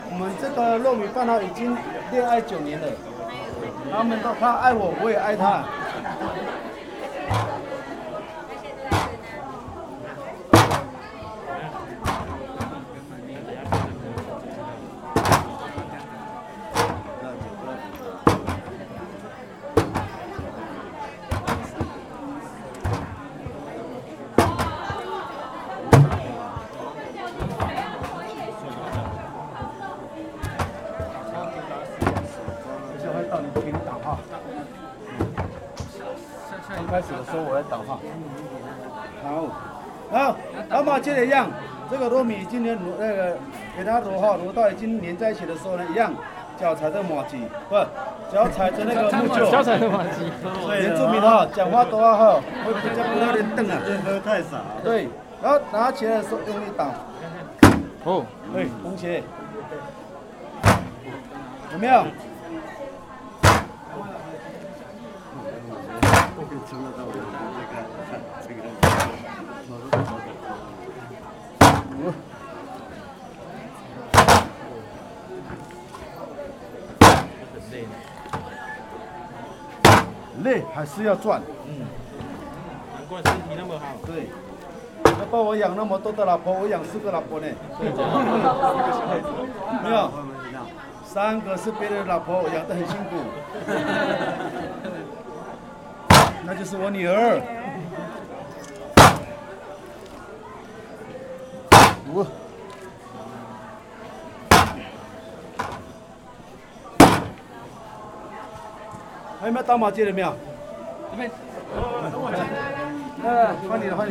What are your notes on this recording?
Recording of Pas-ta'ai ceremony by H4n in Taiwan. 賽夏族矮靈祭，麻糬攤老闆在介紹搗麻糬。